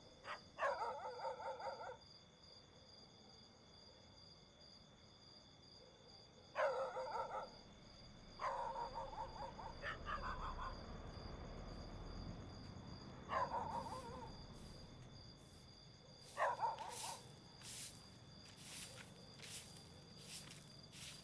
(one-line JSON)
{"title": "Bamako, Mali - Bamako - déambulation - matin", "date": "2007-01-21 05:00:00", "description": "Bamako - Mali\nDéambulation matinale - ambiance", "latitude": "12.62", "longitude": "-8.00", "altitude": "334", "timezone": "Africa/Bamako"}